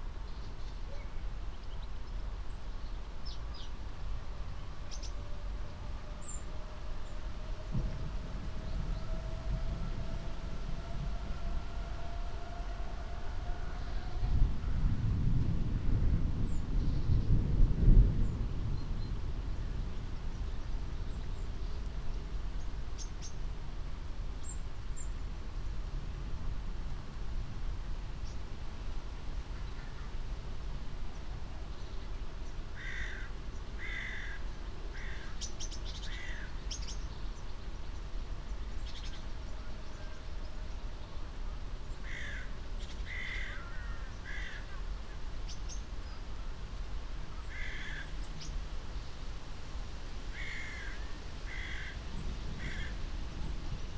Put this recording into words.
in a hurry, had to escape the rain, which quickly approached while recording, (Sony PCM D50, Primo EM 172)